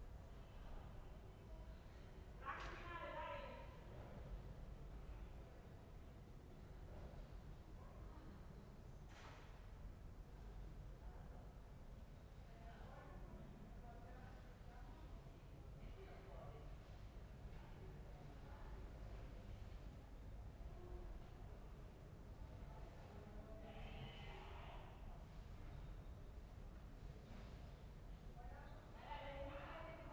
"Saturday afternoon without passages of plane in the time of COVID19" Soundscape
Chapter LXXI of Ascolto il tuo cuore, città. I listen to your heart, city.
Saturday May 9th 2020. Fixed position on an internal (East) terrace at San Salvario district Turin, sixty days after (but sixth day of Phase 2) emergency disposition due to the epidemic of COVID19.
Start at 2:59 p.m. end at 4:00 p.m. duration of recording 01:01:00